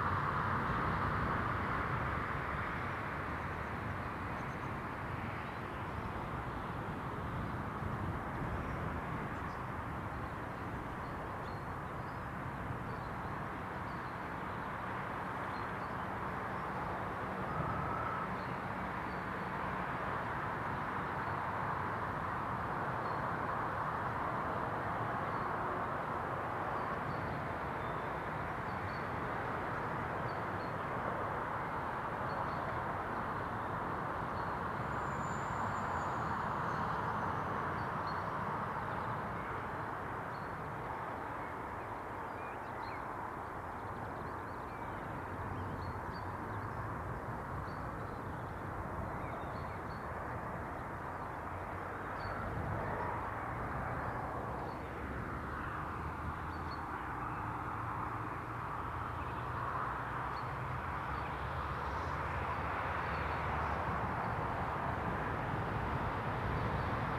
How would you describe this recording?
The Drive Moor Place Woodlands Woodlands Avenue Westfield Grandstand Road, Down the hill, down the wind, comes the traffic noise, From nowhere, golden plover lift, a skylark, and then the merlin